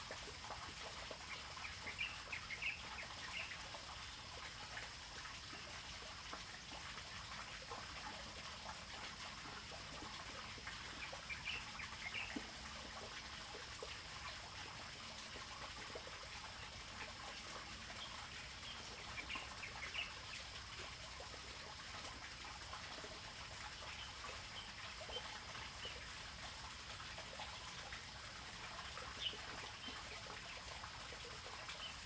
玉長公路, Fuli Township - Birds and Water
Birds singing, Water sound
Zoom H2n MS+XY